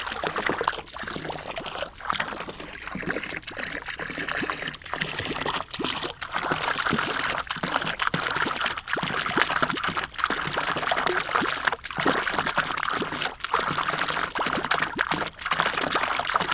cwônat - Cornimont, France
cwônat // goulot de fontaine